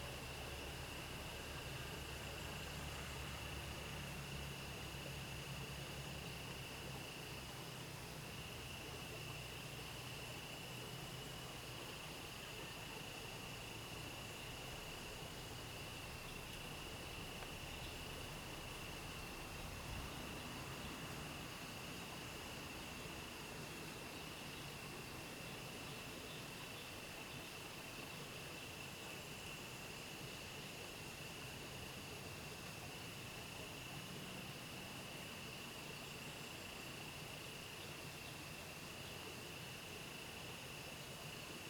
茅埔坑溪, 茅埔坑溼地公園 Puli Township - In the stream
Bird calls, Insect sounds, Early morning, Crowing sounds, sound of water streams
Zoom H2n MS+XY